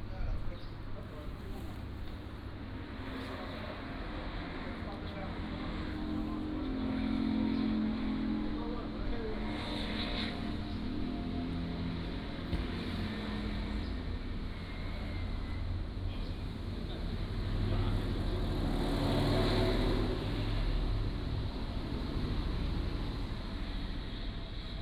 興仁里, Magong City - In the square
In the temple square, Traffic Sound, Small village, Birds singing
Penghu County, Magong City, 23 October 2014